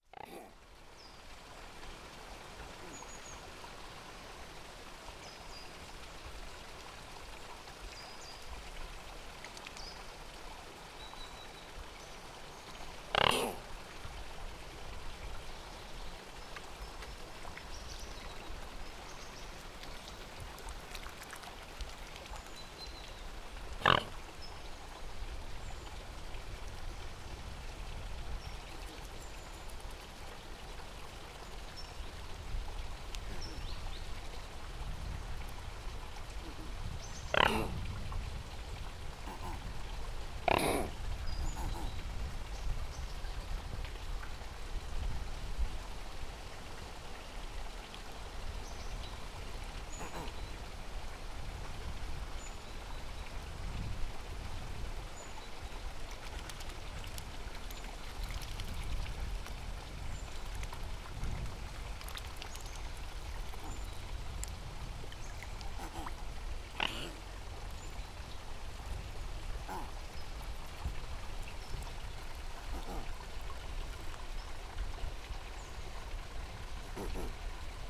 Sintra, gardens around Palácio da Pena - white swans
two white swans snorting and grunting. each making a different sound. splashing about a bit. they were rather perplexed by the presence of the recorder.